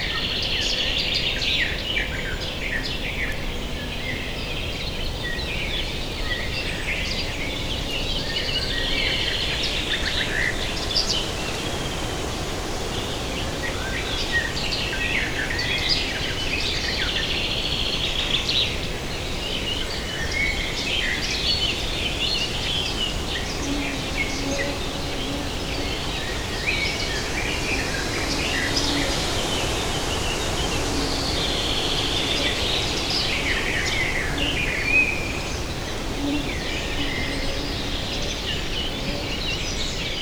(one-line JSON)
{"title": "Early in the morning with mosquitos, Taavi Tulev", "latitude": "59.39", "longitude": "25.56", "altitude": "72", "timezone": "Europe/Berlin"}